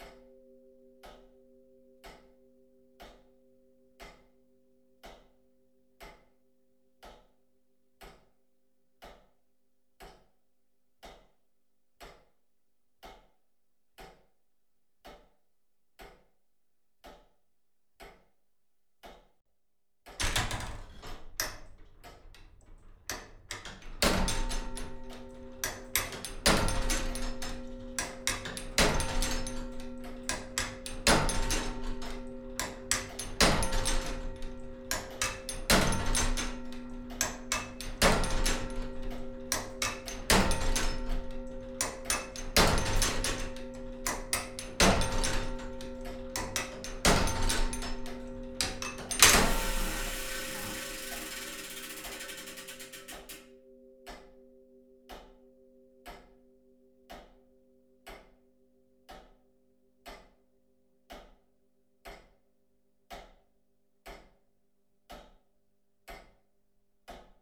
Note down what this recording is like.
El mecanismo del reloj de la iglesia de Sant Bartomeu, a las doce de la noche. En las horas en punto las campanas resuenan dos veces, primero se tocan los cuatro cuartos y la hora; unos instantes después se toca la hora de nuevo, con sus toques correspondientes.